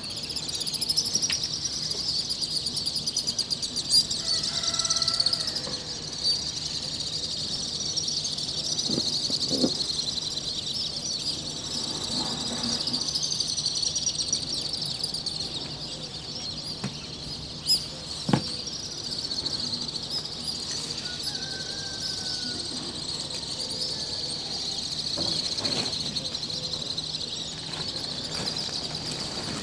Fès El Bali, Fès, Maroc - Swallows of Fes
Hundreds of swallows nest in the ancient walls of the Medina
They wake you up at dawn
Recorded on a rooftop, with a Zoom H2